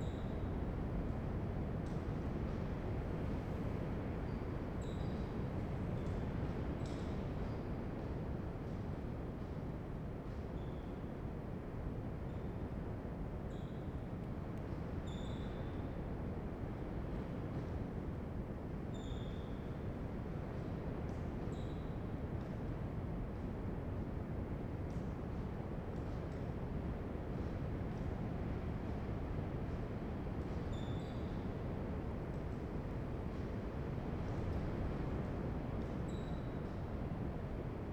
Puerto Percy, Región de Magallanes y de la Antártica Chilena, Chil - storm log - abandoned sport hall
Puerto Percy, abandoned sports hall, wind SW 8km/h
Campamento Puerto Percy, build by the oil company ENAP in 1950, abandoned in 2011.
Primavera, Región de Magallanes y de la Antártica Chilena, Chile, 6 March 2019